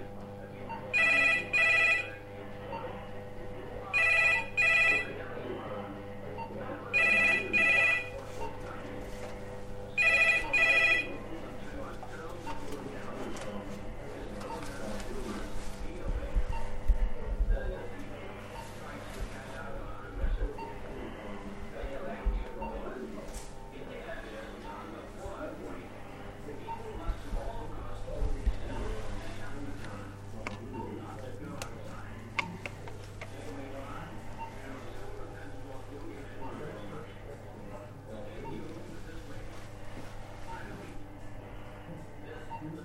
{"title": "Kelvedon Hatch Secret Nuclear Bunker", "date": "2008-06-03 13:24:00", "description": "Sounds of the museum inside of the former cold war bunker. Recorded June 3, 2008 while touring the bunker with Bernd Behr.", "latitude": "51.67", "longitude": "0.26", "altitude": "88", "timezone": "Europe/Berlin"}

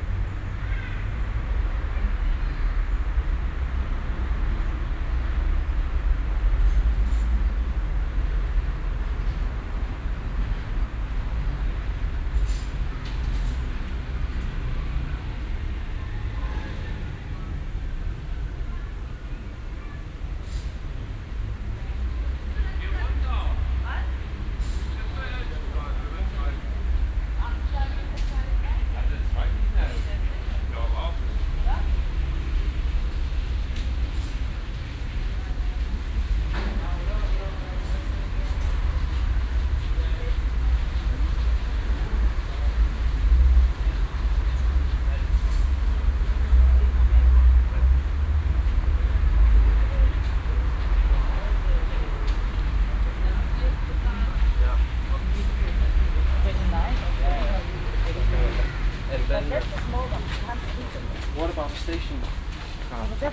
{"title": "elsenstr., restaurant terrace", "date": "2008-08-30 16:45:00", "description": "Sat., 30.08.2008 16:45\nquiet backyard restaurant terrace. this place may sound different in the near future, a city autobahn is planned in the direct neighbourhood.", "latitude": "52.49", "longitude": "13.46", "altitude": "37", "timezone": "Europe/Berlin"}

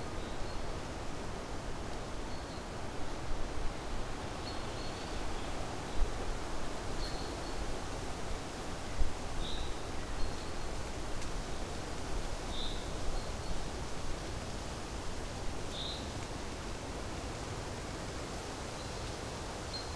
{"title": "Beselich Niedertiefenbach, Deutschland - forest edge, listening to wind", "date": "2014-04-21 18:25:00", "description": "just a moment on a bench, at the forest edge, a familiar place from times long ago, the old oak tree which was hit by a lightning stroke, listening to the wind and watching the horizon.\n(Sony PCM D50)", "latitude": "50.44", "longitude": "8.15", "altitude": "243", "timezone": "Europe/Berlin"}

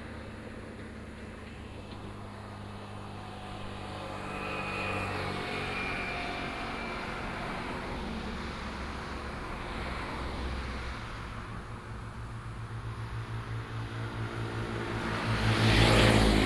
{"title": "內山公路, Sanxia Dist., New Taipei City - Bird and traffic sound", "date": "2017-08-14 10:37:00", "description": "highway, Bird and traffic sound", "latitude": "24.89", "longitude": "121.34", "altitude": "118", "timezone": "Asia/Taipei"}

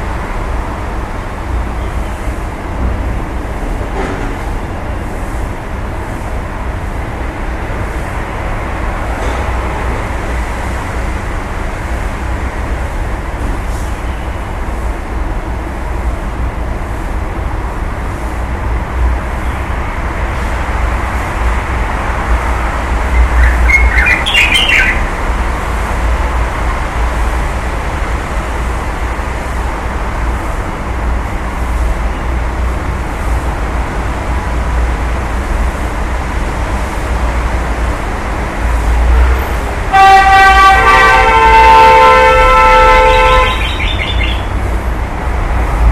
{"title": "hengshan rd boredom", "date": "2010-07-17 12:23:00", "description": "auditory nacolepsy noise floor", "latitude": "31.20", "longitude": "121.44", "timezone": "Asia/Shanghai"}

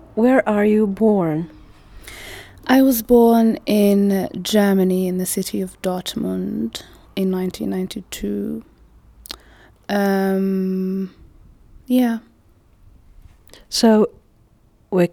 {"title": "AfricanTide, Kortental, Dortmund - Corina interviews Hafsah...", "date": "2017-05-10 17:40:00", "latitude": "51.51", "longitude": "7.41", "altitude": "94", "timezone": "Europe/Berlin"}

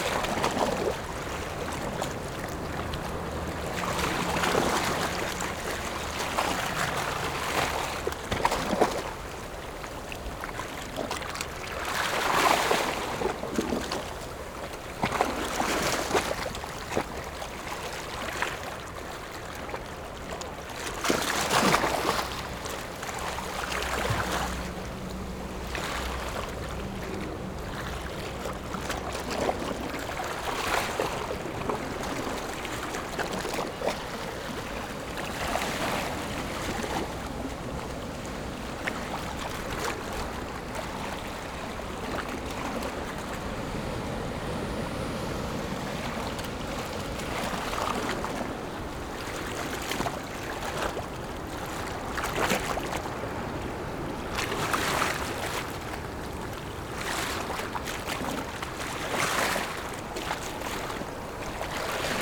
{"title": "頭城鎮大里里, Yilan County - Sound of the waves", "date": "2014-07-21 17:13:00", "description": "On the coast, Sound of the waves\nZoom H6 MS mic+ Rode NT4", "latitude": "24.95", "longitude": "121.91", "altitude": "1", "timezone": "Asia/Taipei"}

